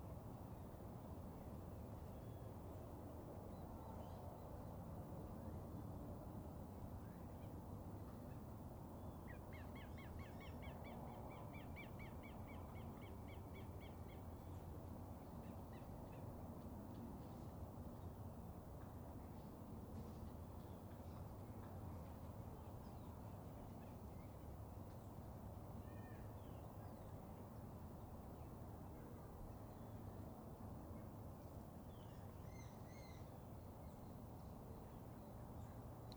{"title": "Camino de Hueso, Mercedes, Buenos Aires, Argentina - Del Campo a la Ruta 2", "date": "2018-06-17 17:40:00", "description": "Recorriendo el Camino de Hueso, desde los límites rurales de Mercedes hasta la Ruta Nacional 5", "latitude": "-34.70", "longitude": "-59.43", "altitude": "46", "timezone": "America/Argentina/Buenos_Aires"}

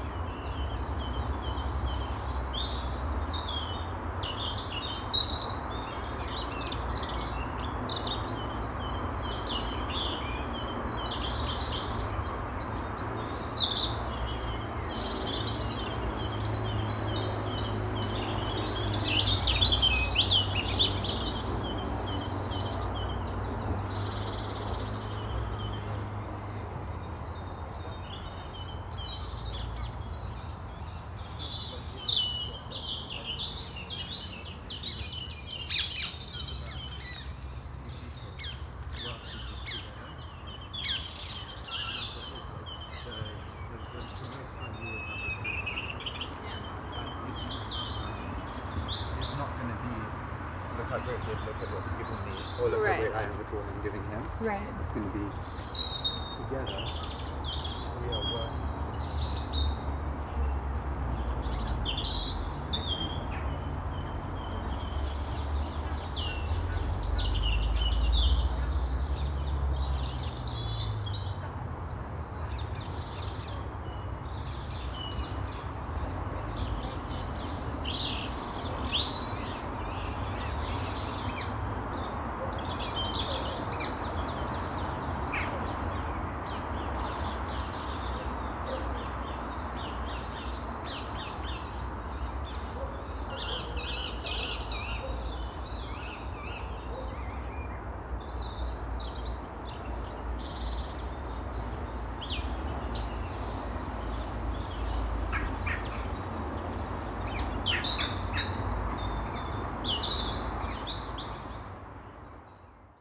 {"title": "Leg O Mutton park (London) - Leg O Mutton park", "date": "2018-04-14 14:36:00", "description": "Sony PCM D100. Leg O Mutton park near Thames path. Lots of birds including parakeet that live nearby. As it is London there is also some traffic in the background. Sonically interesting helicopter fly-by.", "latitude": "51.48", "longitude": "-0.25", "altitude": "7", "timezone": "Europe/London"}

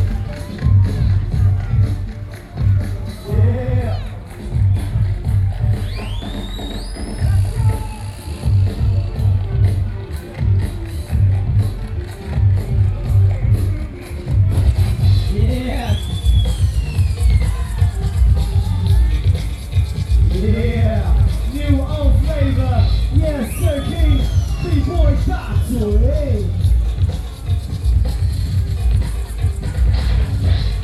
{"title": "Wénhuà Rd, Banqiao District, New Taipei City - Street dance competitions", "date": "2012-11-10 14:44:00", "latitude": "25.02", "longitude": "121.46", "altitude": "13", "timezone": "Asia/Taipei"}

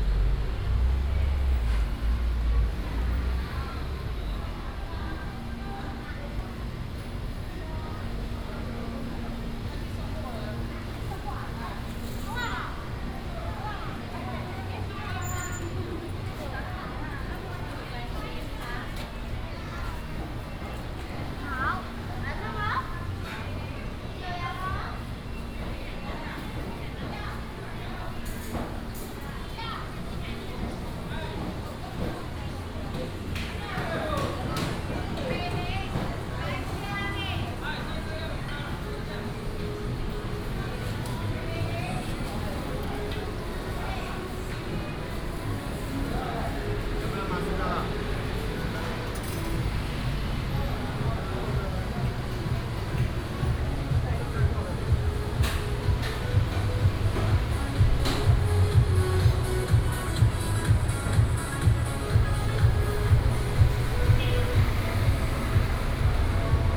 {"title": "中福黃昏市場, Zhongli Dist. - Evening market", "date": "2017-08-02 15:36:00", "description": "walking in the Evening market, traffic sound", "latitude": "24.97", "longitude": "121.23", "altitude": "130", "timezone": "Asia/Taipei"}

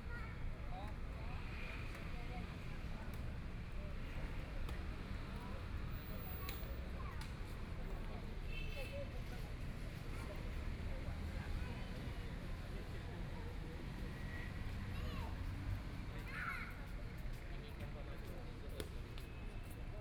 {"title": "SiPing Park, Taipei City - in the park", "date": "2014-02-17 16:47:00", "description": "Afternoon sitting in the park, Traffic Sound, Sunny weather, Community-based park, Elderly chatting, Playing badminton\nBinaural recordings, Please turn up the volume a little\nZoom H4n+ Soundman OKM II", "latitude": "25.05", "longitude": "121.53", "timezone": "Asia/Taipei"}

